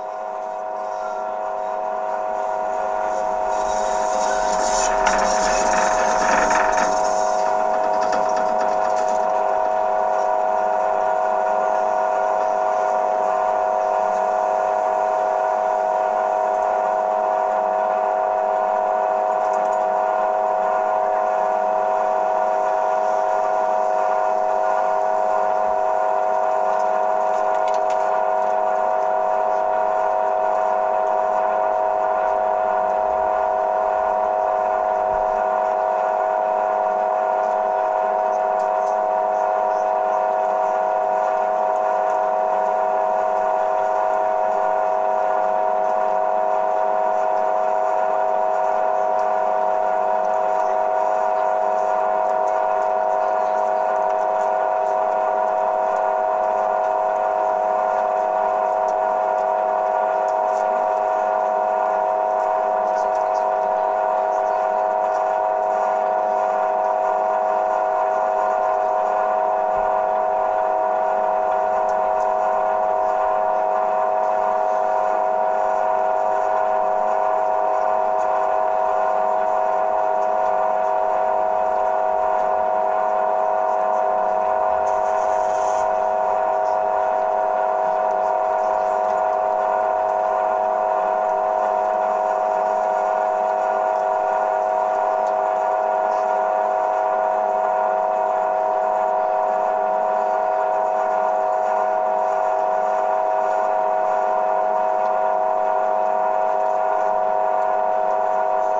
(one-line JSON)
{"title": "Tallinn, Baltijaam parking meter - Tallinn, Baltijaam parking meter (recorded w/ kessu karu)", "date": "2011-04-23 15:40:00", "description": "hidden sounds, internal noises of a parking meter outside Tallinns main train station.", "latitude": "59.44", "longitude": "24.74", "timezone": "Europe/Tallinn"}